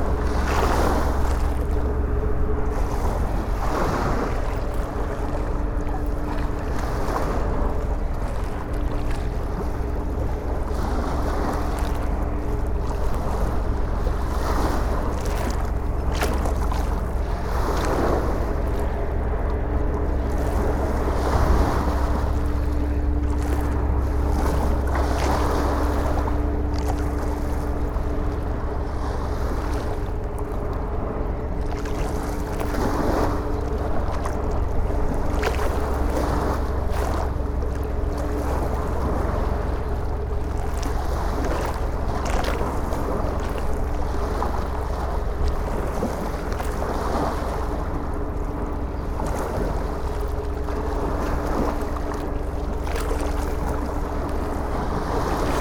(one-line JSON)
{"title": "Quillebeuf-sur-Seine, France - High tide", "date": "2016-07-22 12:30:00", "description": "Recording of the high tide in the Seine river, the river is flowing backwards. A big boat is passing by the river.", "latitude": "49.47", "longitude": "0.53", "timezone": "Europe/Paris"}